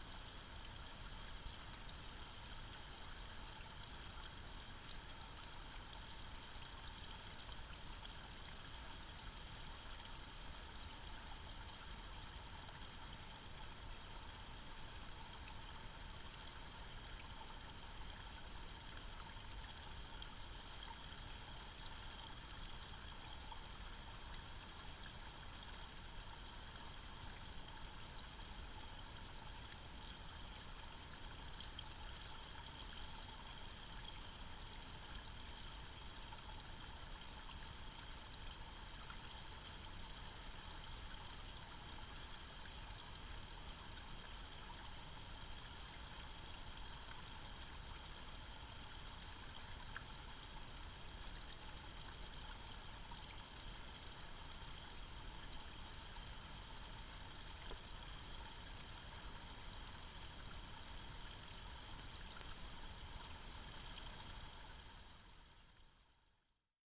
hydrophone recording of a water fountain
February 2010